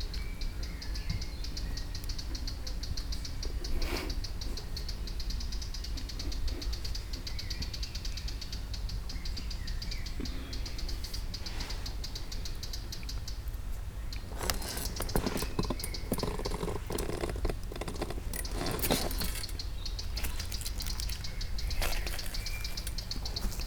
Sasino, summerhouse at Malinowa Road - relaxing on a chair
ambience in the yard captured by recorder on the table. serene atmosphere of sunny summer afternoon. birds chirping on the trees around and in the distant forest. neighbor drilling with his tools. insects buzzing. rustle of a newspaper on the table. picking up a cup and fruits from the table. plane roar exactely every three minutes appart. clang of the chair body when adjusting. (roland r-07)
powiat wejherowski, pomorskie, RP, June 2019